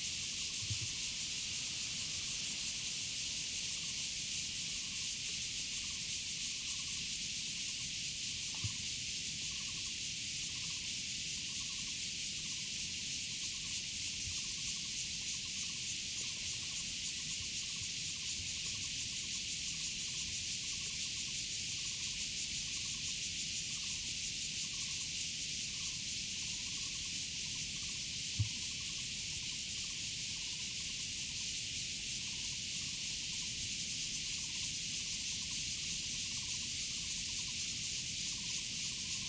Changbin Township, Taiwan - Cicadas sound
Cicadas sound, Frogs sound, Birds singing, Traffic Sound
Zoom H2n MS+XY